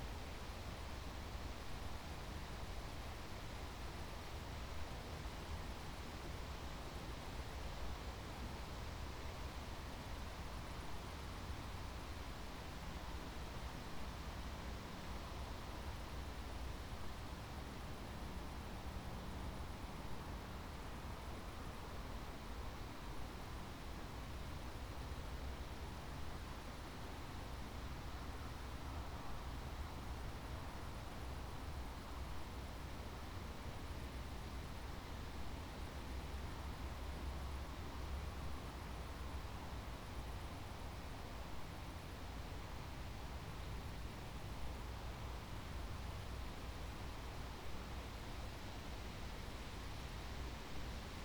serwest: serwester see - the city, the country & me: lakeside
gentle wind through trees
the city, the country & me: september 5, 2010
Chorin, Germany, 5 September